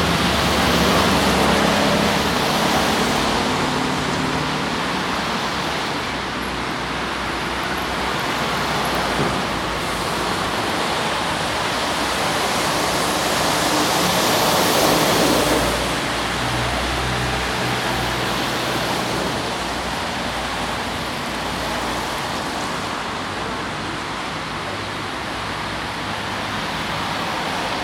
Avenue de Châtelaine, Genève, Suisse - Morning traffic, entrance Park Hentsch
Un matin après la pluie. On entend le trafique des voitures et des transports publiques.
One morning after the rain. We hear traffic in cars and public transport
Rec: Zoom h2n - processed